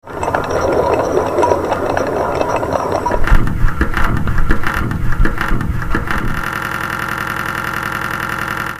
F60 Coal Mining Bridge, industrial relic